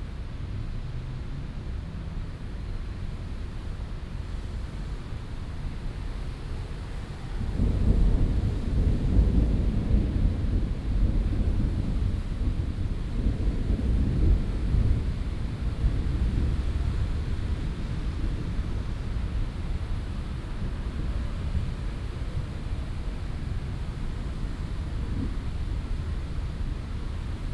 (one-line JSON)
{"title": "Zhongzheng Rd., Keelung City - Traffic Sound and Thunderstorms", "date": "2016-07-18 14:43:00", "description": "Traffic Sound, Thunderstorms", "latitude": "25.13", "longitude": "121.74", "altitude": "4", "timezone": "Asia/Taipei"}